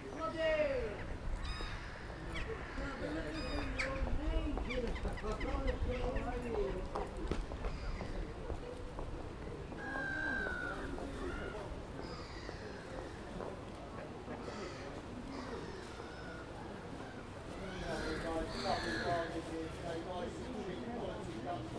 Salisbury, UK - 039 Gulls, ducks, crows, pigeons, market traders